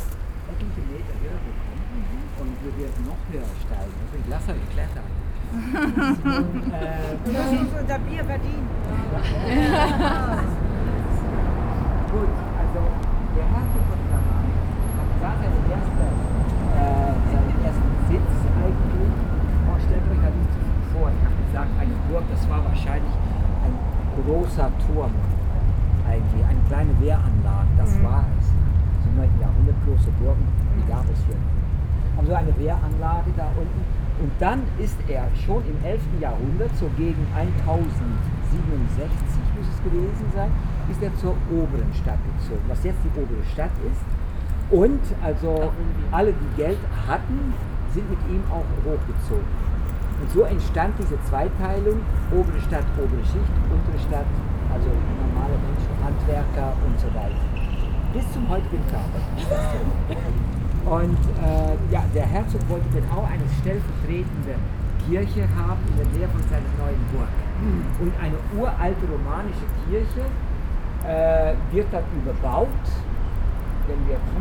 Cathédrale Saints-Michel-et-Gudule, Place Sainte-Gudule, Bruxelles, Belgium - cathedral between money and money...
Excerpts from a nightly walk through Brussels with Stephaan; a bit of out-door tourism during a study trip on EU migration-/control policy with Iris and Nadine of v.f.h.
17 October